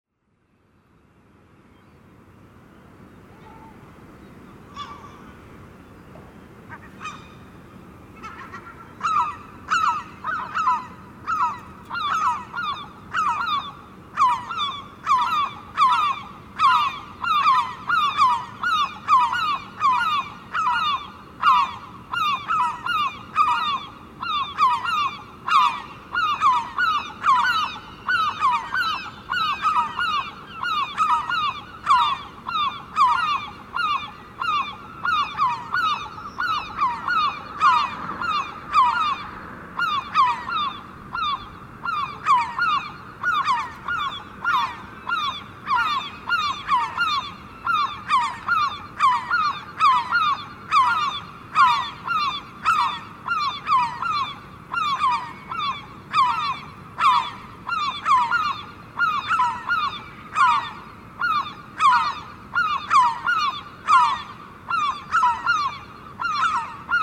Arcole Brindeau, Le Havre, France - The gulls
We are staying here since a few time. Some gulls are very angry because the trash is not accessible. Birds complain.